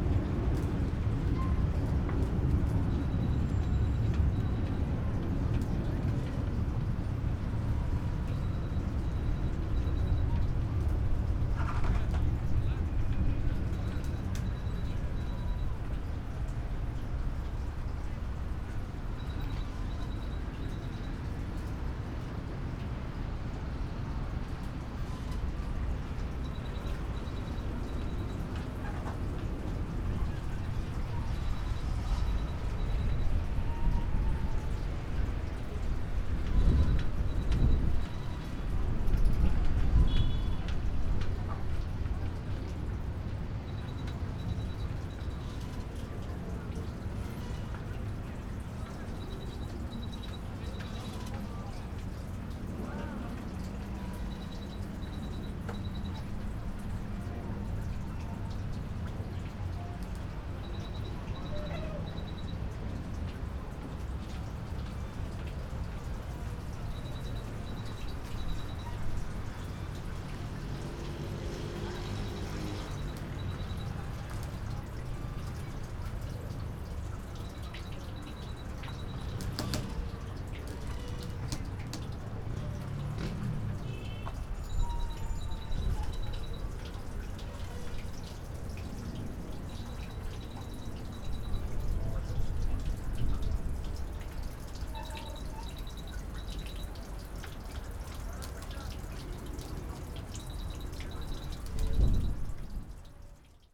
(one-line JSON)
{"title": "Heraklion Yacht Port - on a pier", "date": "2012-09-28 10:04:00", "description": "port ambience. electric box malfunction, forgotten water hose, roar form the nearby airport, horns form the roundabout", "latitude": "35.34", "longitude": "25.14", "altitude": "1", "timezone": "Europe/Athens"}